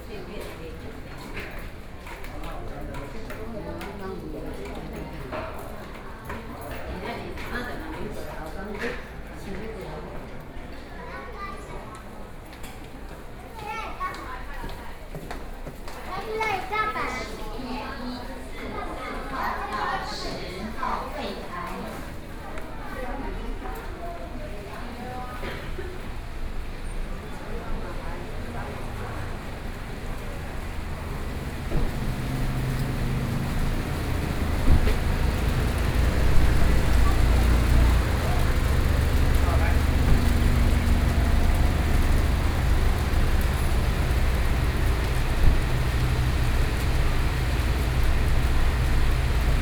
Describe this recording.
Rainy Day, Went out from the hospital after hospital building to another building, Zoom H4n+ Soundman OKM II